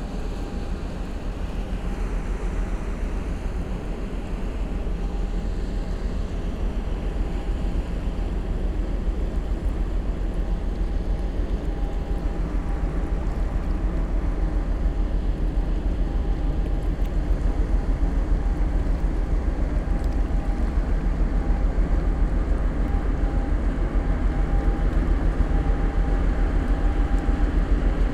Rhein river, Niehl, Köln - freighter moving upstream
cargo ship is moving upstream on the river Rhein.
(Sony PCM D50, DPA4060)
Cologne, Germany, July 2013